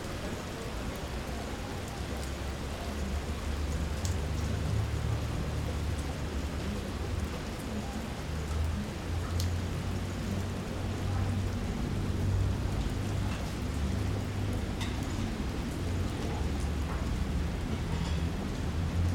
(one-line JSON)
{"title": "Pontinha, Portugal - Thunderstorm in a street alley", "date": "2014-09-27 19:53:00", "description": "Recorded in a H6 Zoom recorder\nNTG-3 Rhode mic with rhode suspension and windshield.\nThe end of a passing thunderstorm in an alley on the suburbs of Lisbon", "latitude": "38.76", "longitude": "-9.20", "altitude": "92", "timezone": "Europe/Lisbon"}